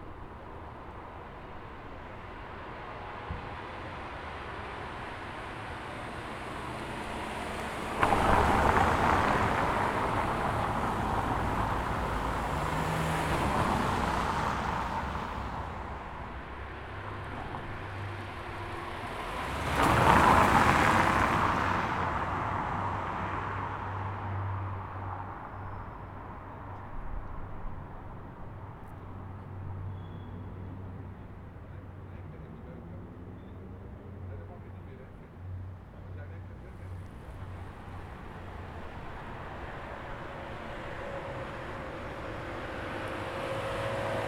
Mercuriusweg. Brinckhorst - Mercuriusweg ground
Mercuriusweg ground. Brinckhorst sound mapping group project.